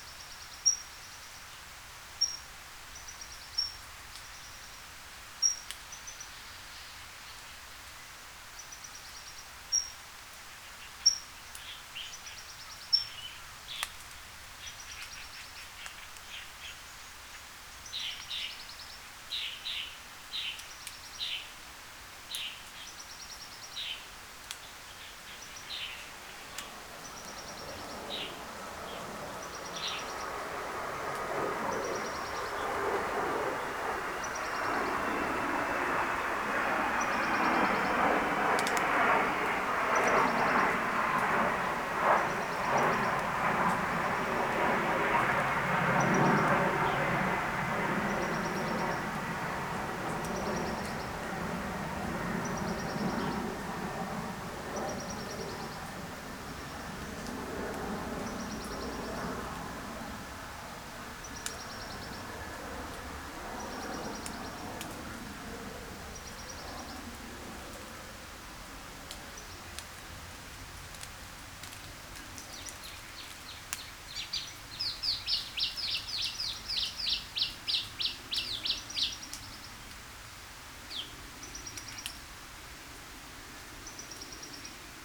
Hong Kong Trail Section, The Peak, Hong Kong - H012 Distance Post
The twelfth distance post in HK Trail, located at the east-northeast side of Pokfulam Reservoir. You can listen to a great number of kinds of birds and a light shower.
港島徑第十二個標距柱，位於薄扶林水塘的東北偏東。你可以聽到豐富的雀鳥種類和微微細雨的聲音。
#Bird, #Plane, #Dog, #Bark, #Crow, #Rain
香港 Hong Kong, China 中国